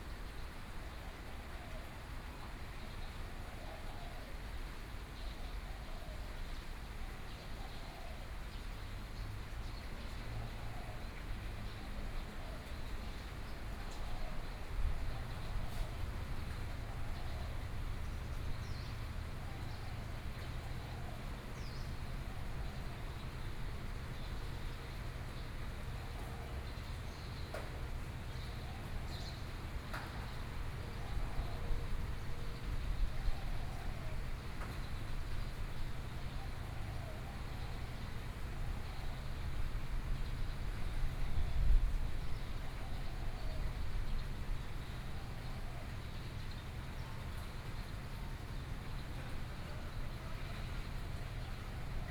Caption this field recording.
Morning in the park, Traffic Sound, Birds singing, Binaural recordings